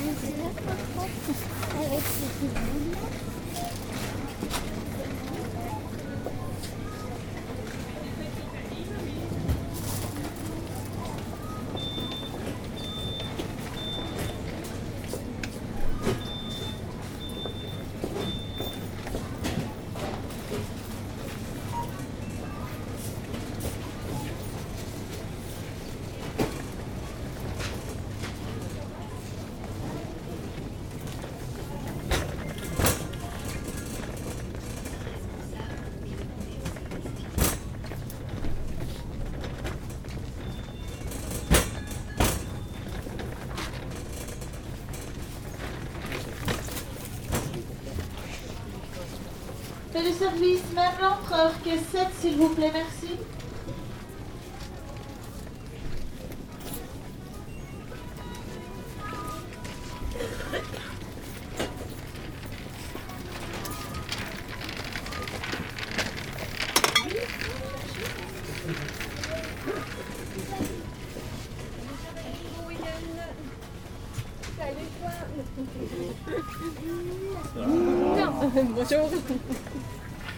Ottignies-Louvain-la-Neuve, Belgique - In the supermarket
Quietly walking in the supermarket on a saturday afternoon. Japanese or chinese people prepairing sushis ans clients buying bottles.
3 December, 14:40